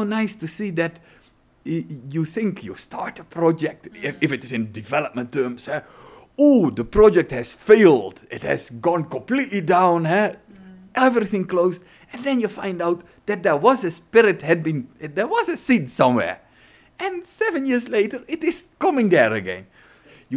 Office of Rosa Luxemburg Foundation, Johannesburg, South Africa - Jos Martens – proud people of the river…
here Jos tells about his recent revising of Binga, now Basilwizi Trust has taken over the local development work. Basilwizi, that is "the people of the river"...
The entire interview with Jos Martens is archived here:
Randburg, South Africa, 28 April 2010